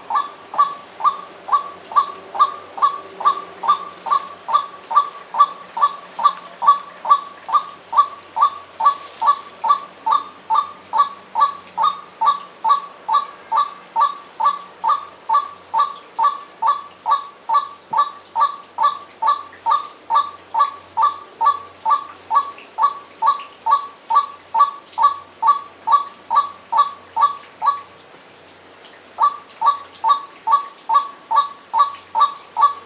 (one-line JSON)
{"title": "Iriomote Jima - Iriomote Jima (daytime and night-time recordings)", "date": "2007-05-05 22:00:00", "description": "Bird and amphibian life on Iriomote\nrecorded onto a Sony Minidisc recorder", "latitude": "24.27", "longitude": "123.85", "altitude": "101", "timezone": "Asia/Tokyo"}